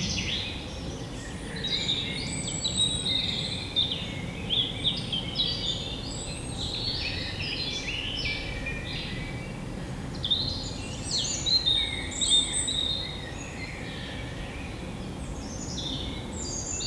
Lasne, Belgique - In the woods
Recording of the birds in the woods. The first bird is a Common Chaffinch. After it's a Blackbird.
Lasne, Belgium, May 2017